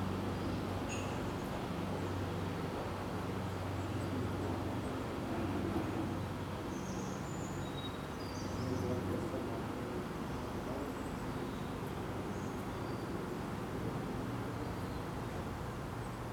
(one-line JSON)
{"title": "Erholungsstätte Lörick, Düsseldorf, Deutschland - düsseldorf, lörick, rhine side, test alarm", "date": "2012-04-02 12:00:00", "description": "Close to the rhine side on an spring noon. The sound of a siren test alarm ending then fading into the nature ambience crossed by plane traffic sounds passing by. In the distance the sound of a construction site, ships passing by and some passengers on the footwalk.\nsoundmap nrw - social ambiences and topographic field recordings", "latitude": "51.25", "longitude": "6.74", "altitude": "36", "timezone": "Europe/Berlin"}